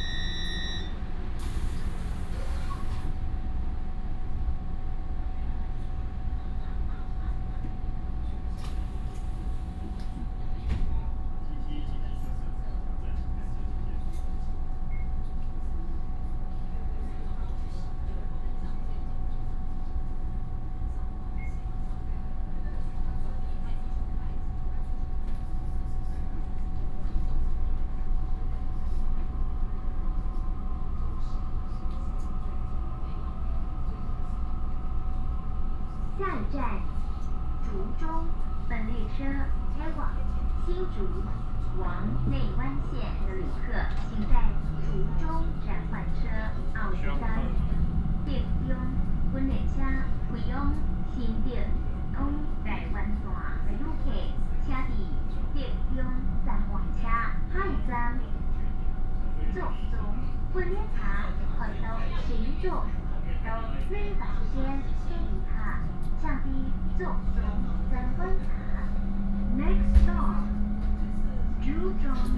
Zhudong Township, Hsinchu County - Regional rail
from Liujia Station to Zhuzhong Station, Train message broadcast